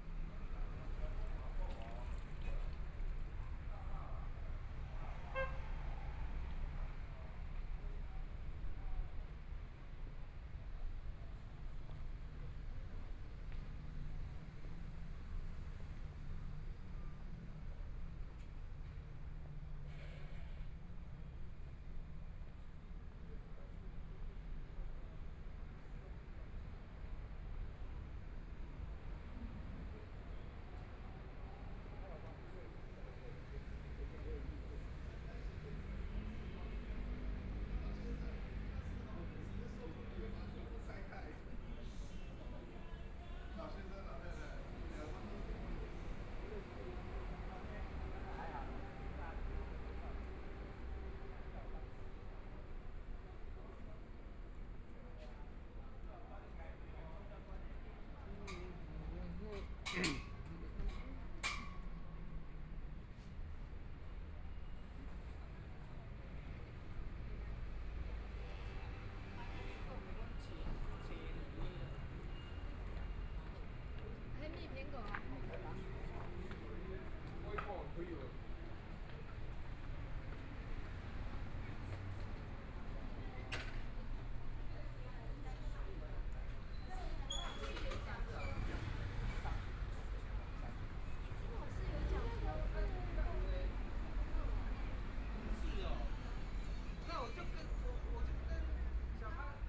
中山區大直里, Taipei City - Walking across different streets
Walking across different streets
Binaural recordings, Please turn up the volume a little
Zoom H4n+ Soundman OKM II
Taipei City, Taiwan, 16 February, ~18:00